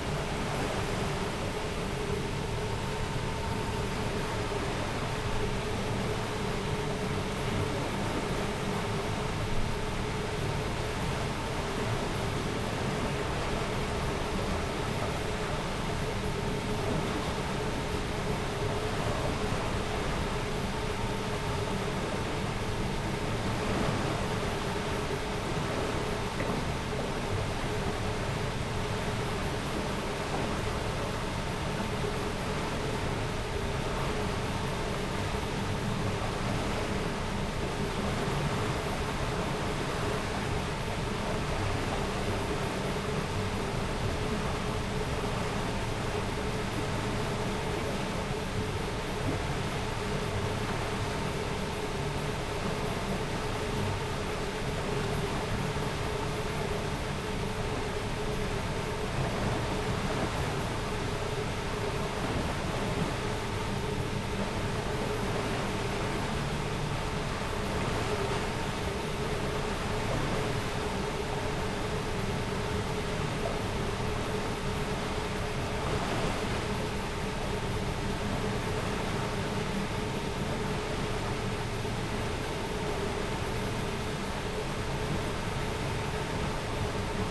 Široká, Vnitřní Město, Český Krumlov, Czechia - Krumlov Mill
Medieval mill on Vltava reiver in Český Krumlov, in 1930's used as hydro power plant. Recording of sound of water flowing through mill race and working turbine reportedly producing electricity for a hotel and bar located in premises of the mill.
Jihozápad, Česká republika, 19 August 2020, 22:19